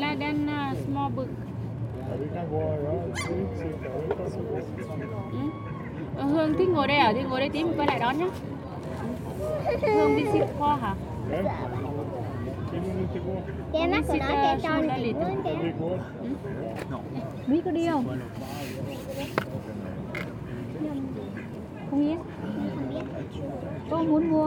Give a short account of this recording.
During a very shiny afternoon on a day off, many people are staying on the main square of Malmö. Black-headed Gull shouting, two teenagers irritated with the bird, 3 persons sitting ON my microphones (they didn't see it !), Mallard duck eating bread crumbs and... my microphones. Tough life !